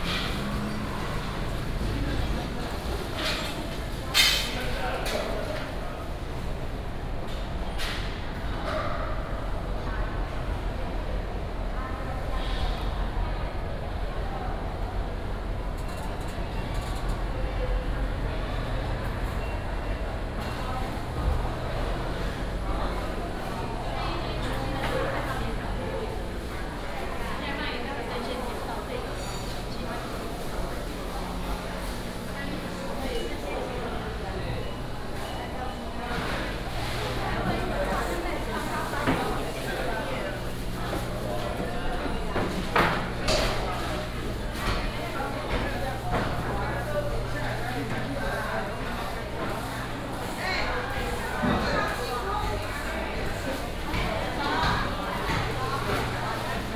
新竹市公有竹蓮零售市場, Hsinchu City - Public retail market
Walking in the traditional market, Public retail market, traffic sound, vendors peddling, Binaural recordings, Sony PCM D100+ Soundman OKM II
21 September, 07:22